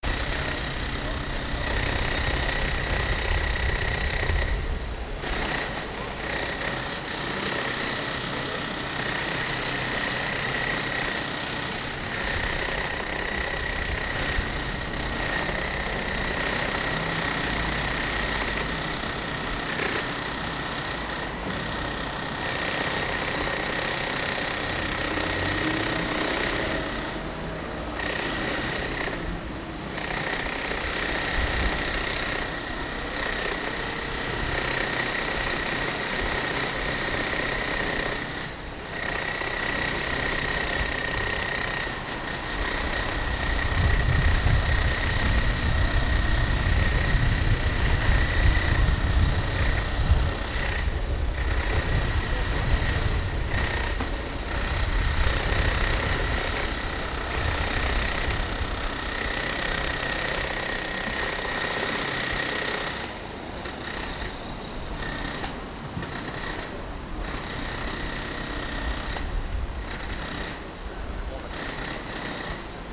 Altstadt-Nord, Köln, Deutschland - Bauarbeiten an der Kölner Oper / Construction work at the Cologne Opera
Zwei Arbeiter stemmen mit Elektromeißeln Klinker von der Fassade, Autos fahren vorbei. / Two workers lift with electric chisels clinker from the facade, cars pass.
Köln, Germany, 22 May 2015